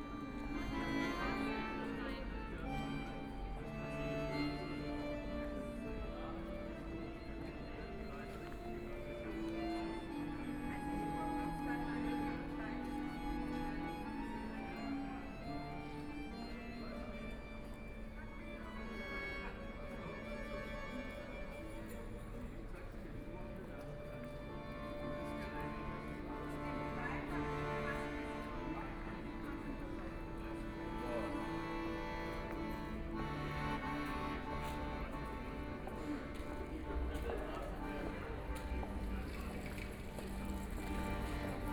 Maffeistraße, Munich 德國 - In the tram stop
In the tram stop, Street music, Pedestrians and tourists
11 May, 11:43